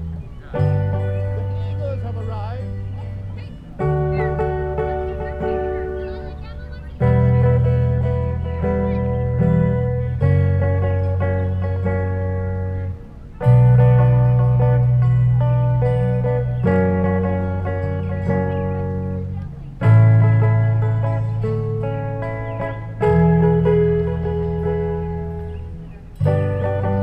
Forgetful Busker, Bethesda Fountain, Central Park, New York, USA - Busker
At The Bethesda Fountain while we munch our lunch this busker seems oblivious that his microphone is unplugged. The crowd don't mind and help him out.
MixPre 3 with 2 x Beyer Lavaliers.